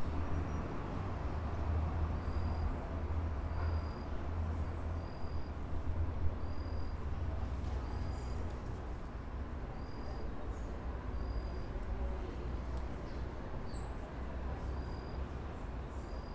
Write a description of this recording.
Gravação realizada por acadêmicos da FURB para um projeto realizado através do PIBID em uma manhã de reuniões na Escola Básica Júlia Lopes de Almeida